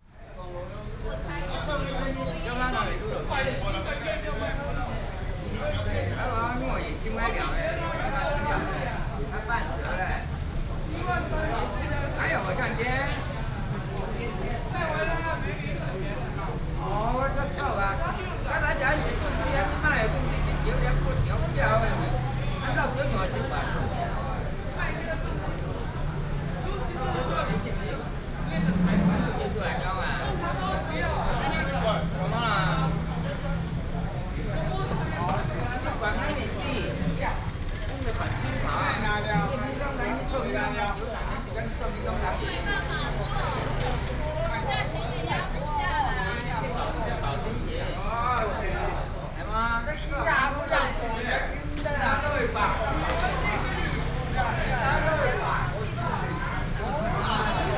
客家戲曲公園, Zhudong Township - Drinking and chatting
A lot of people are at the entrance to the park, Drinking and chatting, Binaural recordings, Sony PCM D100+ Soundman OKM II
Hsinchu County, Taiwan